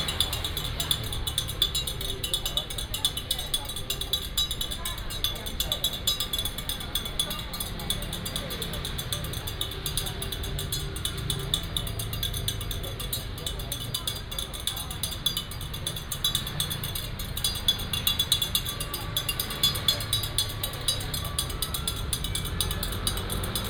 Sec., Zhonghua Rd., North Dist., Hsinchu City - Folk rituals
Folk rituals, Traffic Sound, 收驚 (Siu-kiann, Exorcise)